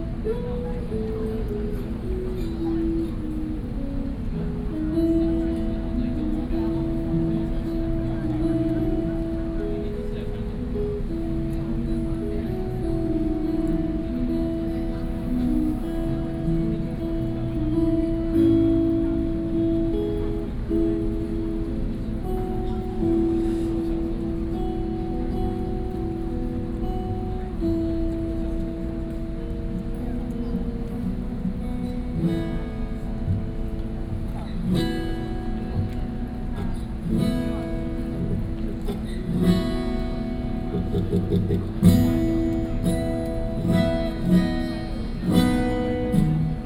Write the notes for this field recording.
Commemorate the Tiananmen Incident., Sony PCM D50 + Soundman OKM II